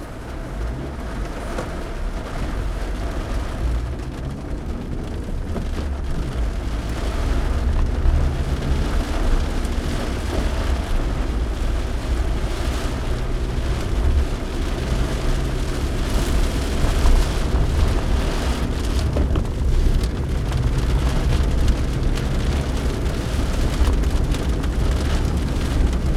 wermelskirchen: zur mühle - the city, the country & me: car drive in the rain
heavy rain showers, car drive in the rain
the city, the country & me: june 18, 2011
Germany, 18 June